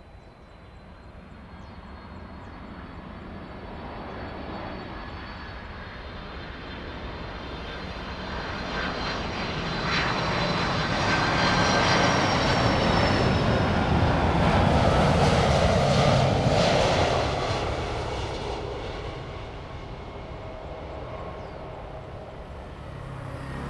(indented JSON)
{
  "title": "中華路, Dayuan Dist., Taoyuan City - The plane flew through",
  "date": "2017-08-18 15:47:00",
  "description": "Landing, The plane flew through, traffic sound, birds sound\nZoom h2n MS+XY",
  "latitude": "25.07",
  "longitude": "121.21",
  "altitude": "24",
  "timezone": "Asia/Taipei"
}